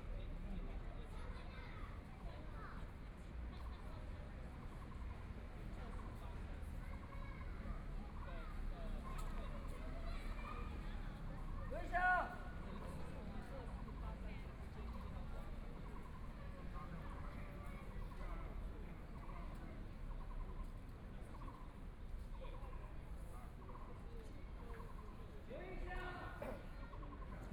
ZhuChang Park, Taipei City - in the Park
Chat between elderly, Traffic Sound, Kids game noise, Birds sound
Please turn up the volume
Binaural recordings, Zoom H4n+ Soundman OKM II